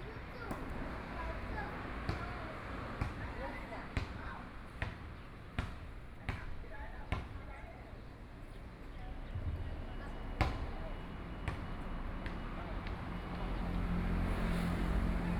鎮安宮, 頭城鎮竹安里 - In the temple plaza
In the temple plaza, Traffic Sound, Children are playing basketball
Sony PCM D50+ Soundman OKM II